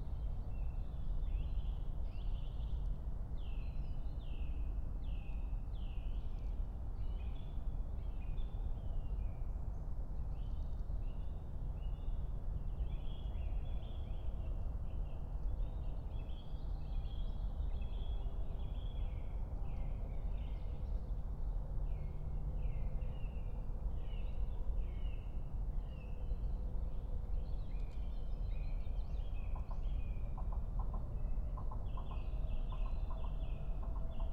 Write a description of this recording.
05:00 Berlin, Königsheide, Teich - pond ambience